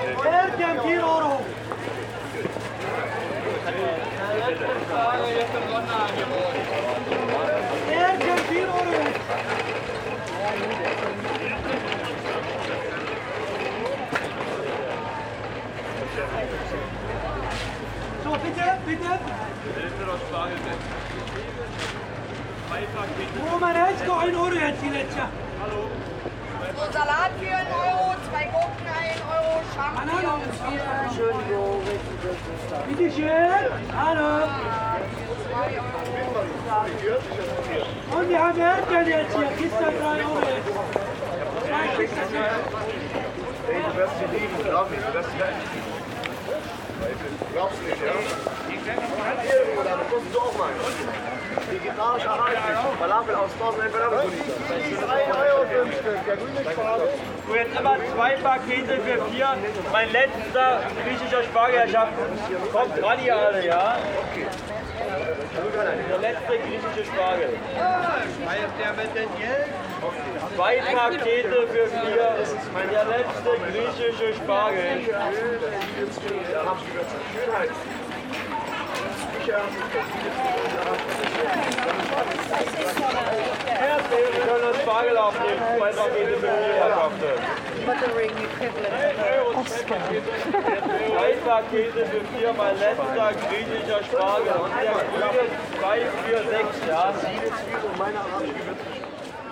Kollwitzkiez, Berlin, Deutschland - Berlin. Kollwitzplatz – Markt
Standort: Kollwitzplatz, Kollwitzstraße Ecke Wörther Straße. Blick Richtung Nordwest und Südost.
Kurzbeschreibung: Wochenmarkt mit Marktschreiern und Publikum.
Field Recording für die Publikation von Gerhard Paul, Ralph Schock (Hg.) (2013): Sound des Jahrhunderts. Geräusche, Töne, Stimmen - 1889 bis heute (Buch, DVD). Bonn: Bundeszentrale für politische Bildung. ISBN: 978-3-8389-7096-7